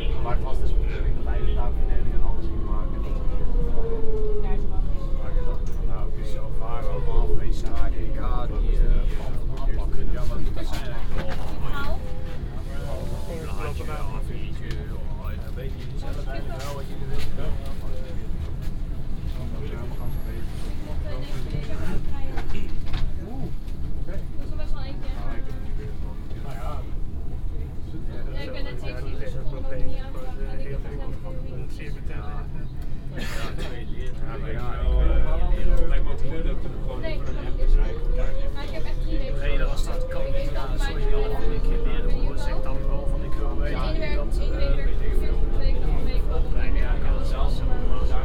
Europaplein, Amsterdam, Netherlands - (305) Metro platform + ride
Binaural recording of metro platform + subsequent metro ride. Unfortunately, the exact location is unsure, but start at Europaplein is pretty feasible.
Recorded with Soundman OKM + Sony D100